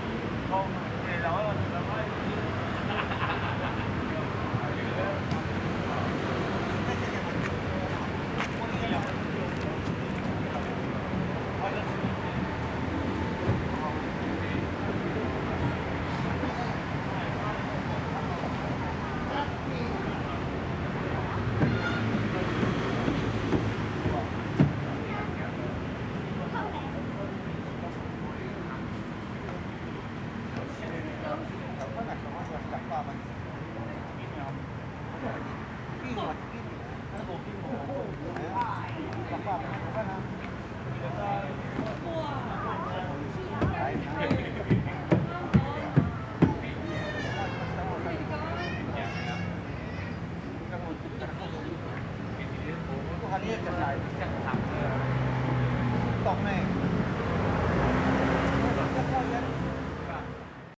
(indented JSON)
{
  "title": "Ln., Sec., Zhongshan Rd., Zhonghe Dist. - Children Playground",
  "date": "2012-02-14 17:16:00",
  "description": "Children Playground, Sitting in the river, Traffic Sound\nSony Hi-MD MZ-RH1 +Sony ECM-MS907",
  "latitude": "25.01",
  "longitude": "121.51",
  "altitude": "15",
  "timezone": "Asia/Taipei"
}